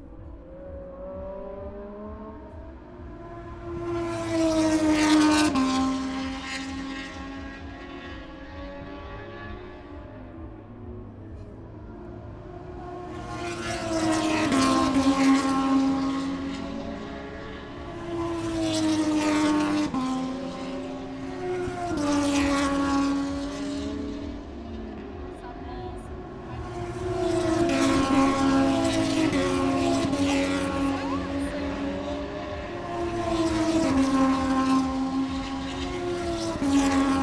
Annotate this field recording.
british motorcycle grand prix 2022 ... moto two ... free practice one ... dpa 4060s on t bar on tripod to zoom f6 ...